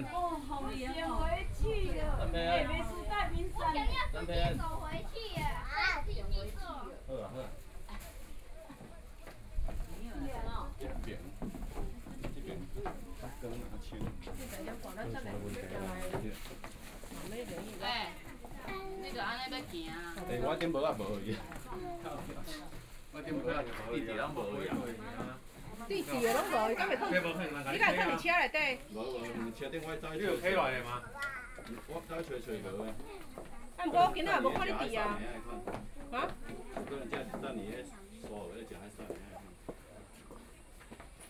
Chat, Tourist, Tourist Scenic Area, At the lake
Sony PCM D50+ Soundman OKM II